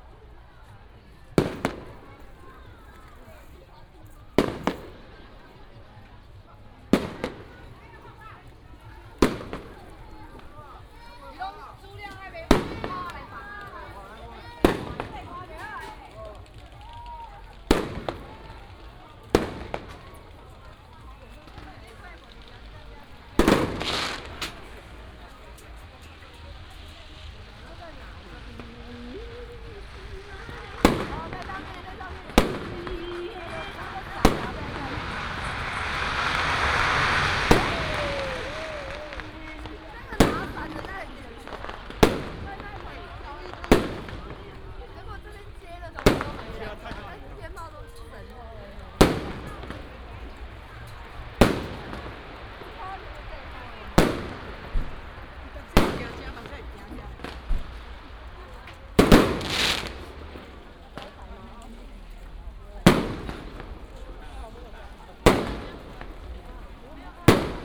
白西里, Tongxiao Township - walking in the Street
Traditional temple fair, Fireworks and firecrackers sound
Miaoli County, Tongxiao Township, 9 March 2017